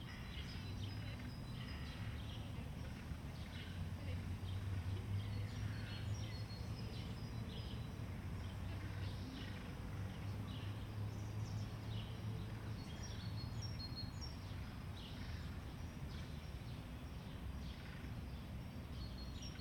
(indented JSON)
{
  "title": "Ogród Saski, Warszawa, Polska - Under the Temple of Vesta in the Saxon Garden",
  "date": "2022-05-11 16:20:00",
  "description": "A peaceful afternoon in the Saxon Garden in Warsaw - chirping birds - starlings - crows - passing people - bicycles.\nRecording made with Zoom H3-VR, converted to binaural sound",
  "latitude": "52.24",
  "longitude": "21.01",
  "altitude": "112",
  "timezone": "Europe/Warsaw"
}